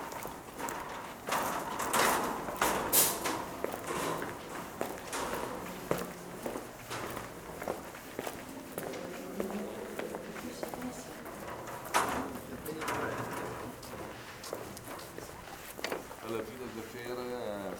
walk at the Cemetery on the 2nd of November. Several people talking and walking on the gravel
Pavia, Italy, 2 November 2012, 14:34